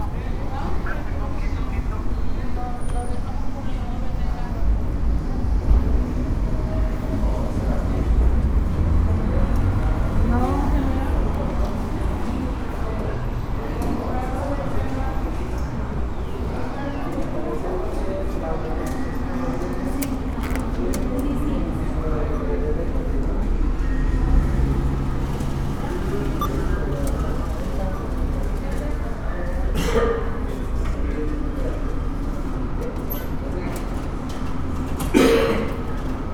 Plaza Mayor, Centro Comercial, León, Gto., Mexico - En el cajero automático BBVA Bancomer de Plaza Mayor.
Going to the mall ATM from the parking lot.
I made this recording on March 6rd, 2020, at 12:41 p.m.
I used a Tascam DR-05X with its built-in microphones and a Tascam WS-11 windshield.
Original Recording:
Type: Stereo
Yendo al cajero automático del centro comercial Plaza Mayor desde el estacionamiento.
Esta grabación la hice el 6 de marzo 2020 a las 12:41 horas.